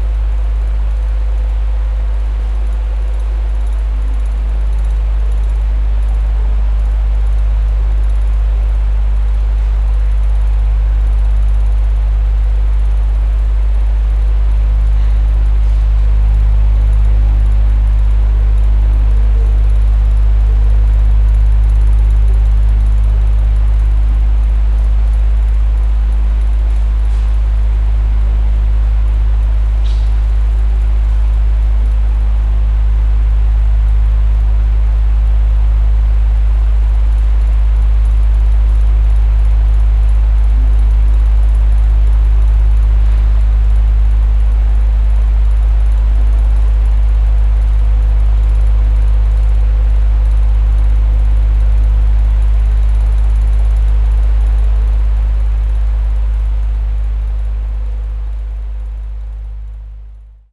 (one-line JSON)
{"title": "Berlin, C/O Photogallery - floor fan", "date": "2011-08-13 07:13:00", "description": "recorded with mics close to the blades of a floor fan in one of the exhibitions rooms", "latitude": "52.53", "longitude": "13.39", "altitude": "41", "timezone": "Europe/Berlin"}